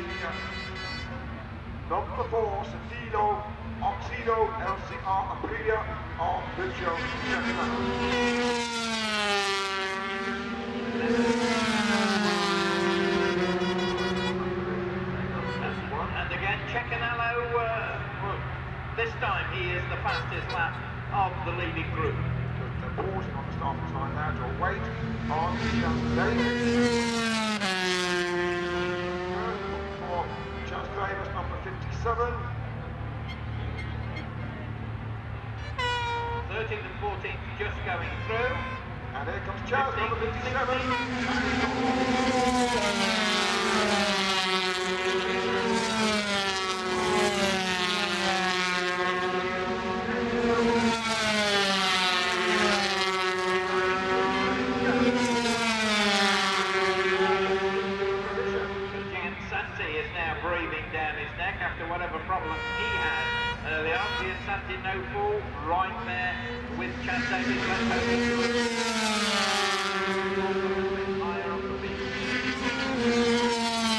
Castle Donington, UK - British Motorcycle Grand Prix 2002 ... 125 ...
125cc motorcycle race ... part two ... Starkeys ... Donington Park ... the race and associated noise ... Sony ECM 959 one point stereo mic to Sony Minidisk ...